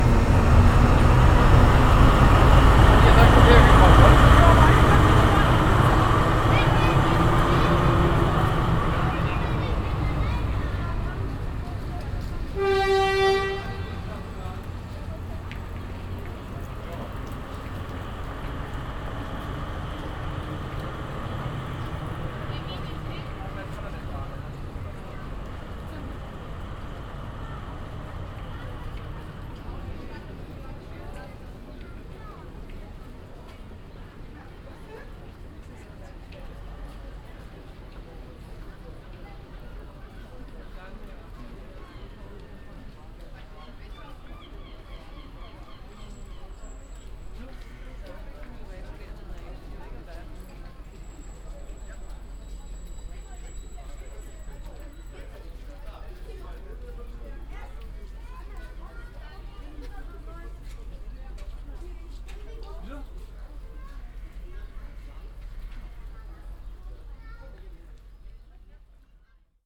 puttgarden, station
at the station, final stop, a train passing by to make a turn - people walking to the station exit
soundmap d - social ambiences and topographic field recordings
13 August 2010, ~19:00